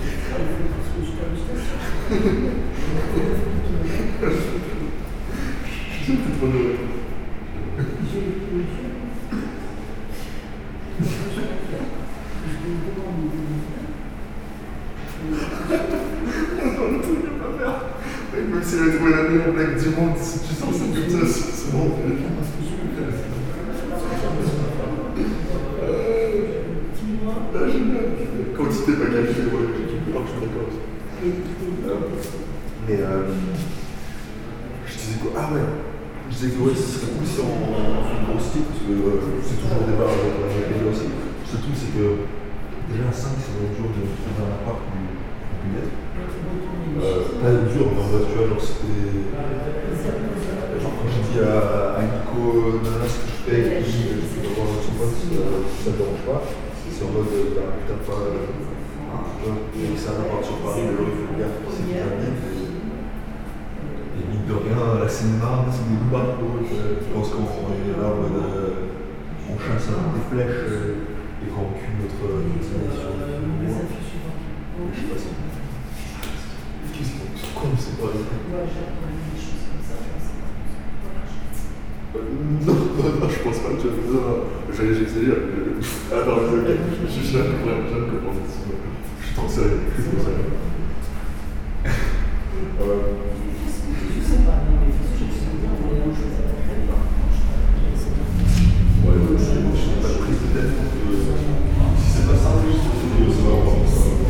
Montereau-Fault-Yonne, France - Montereau station
People discussing with their phone in the Montereau station. Bla-bla-blaaa blablabla...